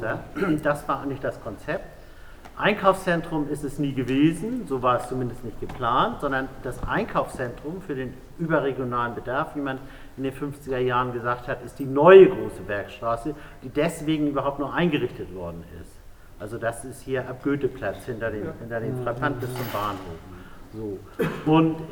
Kleine Historie der Großen Bergstraße. 29.11.2009 - Publikumsdiskussion Recht auf Stadt - Was ist das?
Erdgeschoss Frappant, Große Bergstraße, Hamburg
Hamburg, Germany